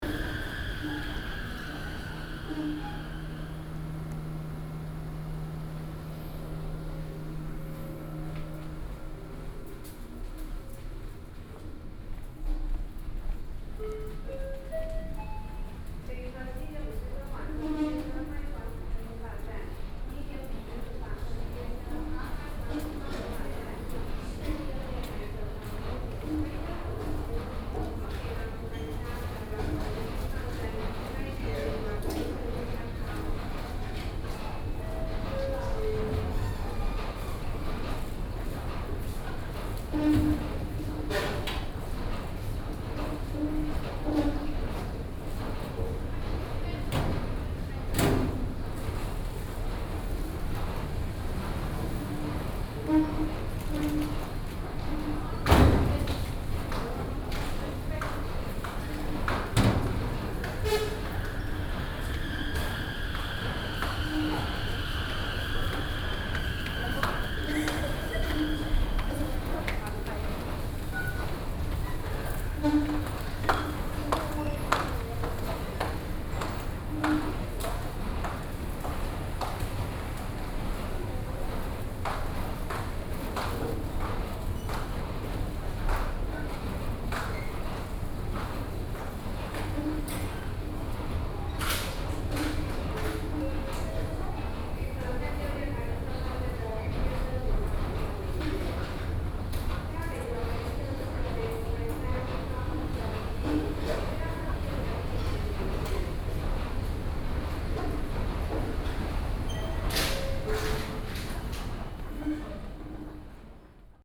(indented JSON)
{
  "title": "Shulin Station, New Taipei City - walking into the Station",
  "date": "2012-06-20 11:50:00",
  "description": "Take the escalator, Escalator noise, walking into the Station\nBinaural recordings\nSony PCM D50 + Soundman OKM II",
  "latitude": "24.99",
  "longitude": "121.42",
  "altitude": "21",
  "timezone": "Asia/Taipei"
}